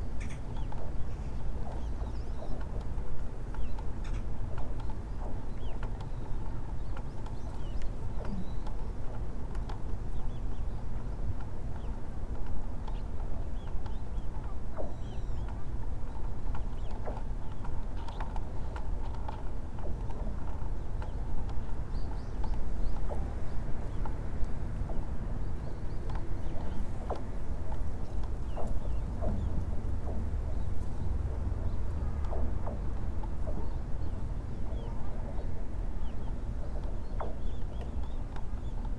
Ice covers the Seoksa river bank to bank at the river-mouth and starts to grow out into Chuncheon lake.